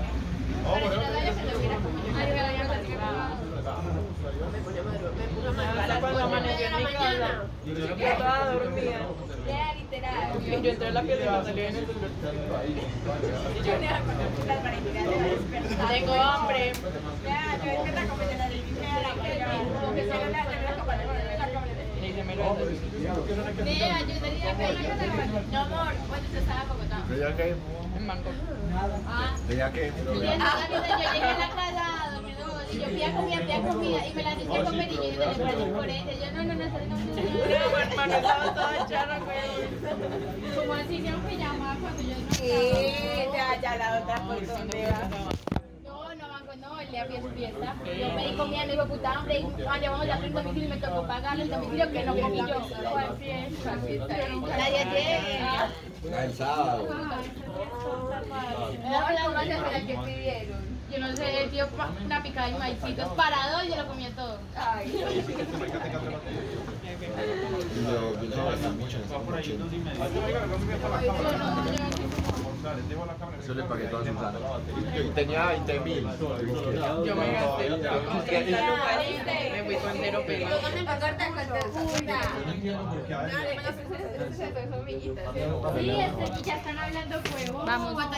Cra., Medellín, Antioquia, Colombia - Quiosco Mandarinas UDEM
sep. 9.45 a. m. Mandarinas
Fecha: 9 de septiembre de 2021
Hora: 9:45
Coordenadas:
Dirección: Universidad de Medellín. Mandarinas facultad de comunicación.
Descripción: Sonido ambiente de Mandarinas de la facultades de comunicación en cambio de clases.
Sonido tónico: Personas Hablando durante la fila en el quiosco de Mandarinas
Señal sonora: Personas que de fondo que aveces suben el tóno, pajaros muy de fondo
Técnica: Micrófono celular estéreo
Tiempo: 3 minutos
Integrantes:
Juan José González
Isabel Mendoza Van-Arcken
Stiven López Villa
Manuela Chaverra
Región Andina, Colombia, 9 September 2021, ~10:00